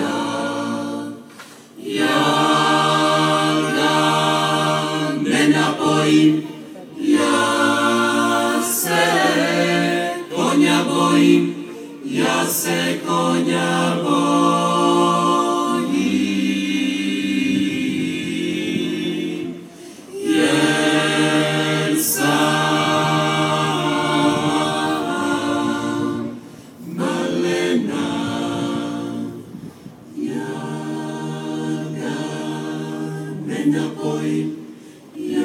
{"title": "Vela Luka, Kroatien - Klapas 3", "date": "2013-08-21 21:42:00", "latitude": "42.96", "longitude": "16.72", "altitude": "7", "timezone": "Europe/Zagreb"}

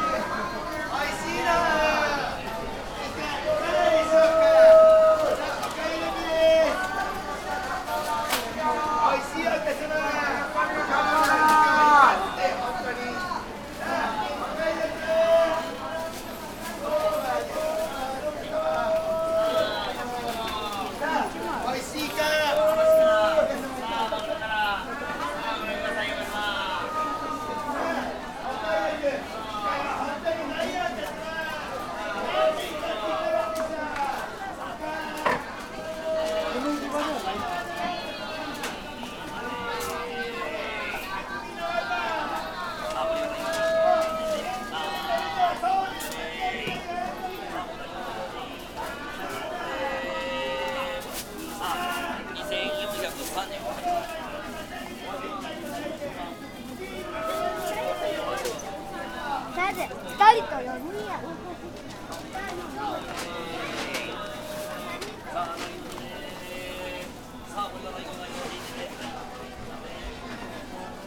{"title": "Osaka northern downtown, Umeda district, Hanshin deparment store, level - level b1", "date": "2013-03-31 17:52:00", "description": "sonic atmosphere of the grocery store in the basement of one of the department stores. vendors calling to buy their products, a river of customers, a vortex of sounds.", "latitude": "34.70", "longitude": "135.50", "altitude": "18", "timezone": "Asia/Tokyo"}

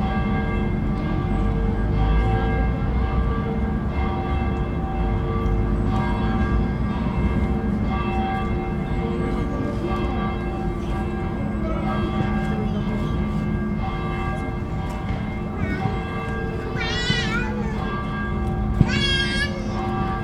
{"title": "Praha, Česká republika - Church Bells and children", "date": "2013-04-07 18:00:00", "description": "Karlínské náměstí, 6pm the bells on the Church, trafic and kids playing.", "latitude": "50.09", "longitude": "14.45", "altitude": "189", "timezone": "Europe/Prague"}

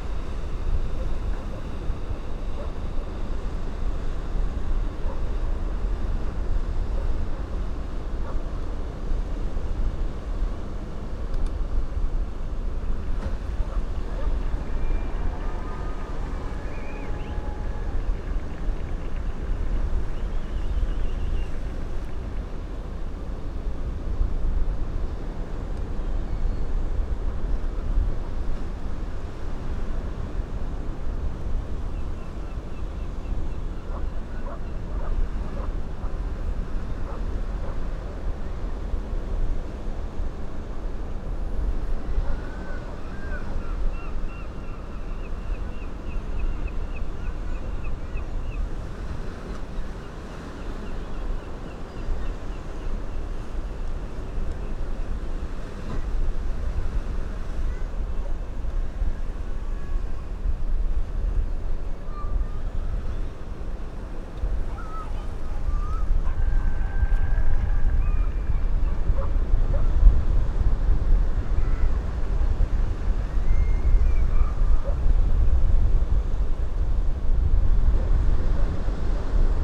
{"title": "West Lighthouse, Battery Parade, UK - West Pier Lighthouse ...", "date": "2019-10-05 11:30:00", "description": "West Pier Lighthouse Whitby ... lavalier mics clipped to bag ... soundscape from the top of the lighthouse ... student protest about climate change in the distance ...", "latitude": "54.49", "longitude": "-0.61", "timezone": "Europe/London"}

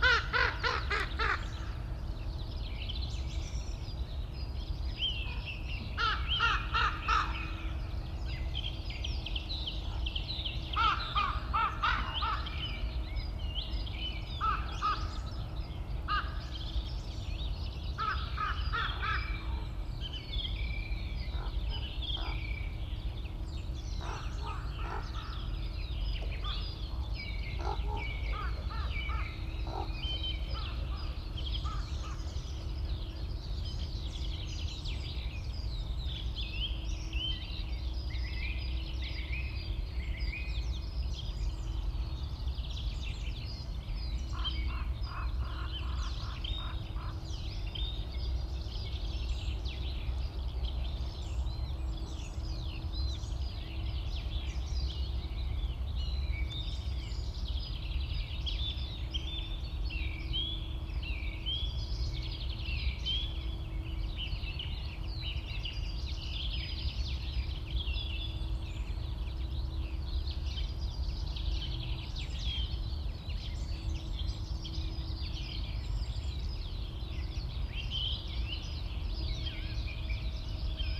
{"date": "2021-03-24 05:39:00", "description": "Birds singing include song thrush, chaffinch, skylark, ravens, crows.\nAround 4min the generator speeds up and its hum rises in pitch, but the wind is very light and drops again. Very distant cranes can be heard towards the end.", "latitude": "52.69", "longitude": "13.64", "altitude": "77", "timezone": "Europe/Berlin"}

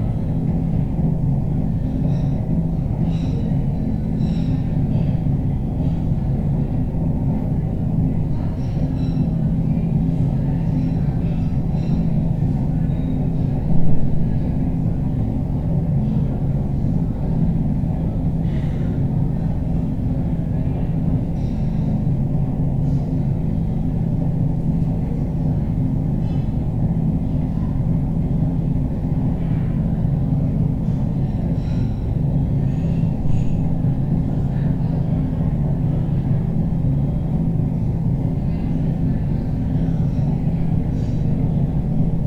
{"title": "Beera Meiselsa, Kraków, Polska - Chalka Restobar restroom", "date": "2022-07-03 10:31:00", "description": "restroom in the back of the bar with a very noisy air outlet. the place was very busy thus many conversations can be heard as well as sounds from the kitchen, mainly used dishes being washed. (roland r-07)", "latitude": "50.05", "longitude": "19.94", "altitude": "209", "timezone": "Europe/Warsaw"}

